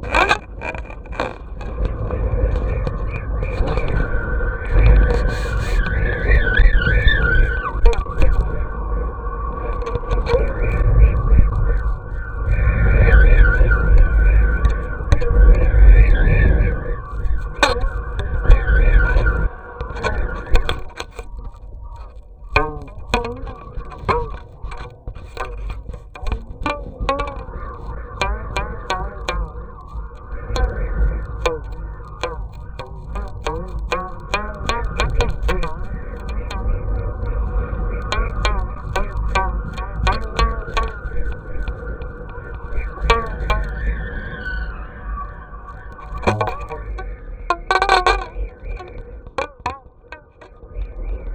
A Kite String in Golden Valley, Malvern, Worcestershire, UK - Flying a Kite
A mono recording made with a cheap contact mic atached to the kite string on a blustery day.
England, United Kingdom, 13 March 2021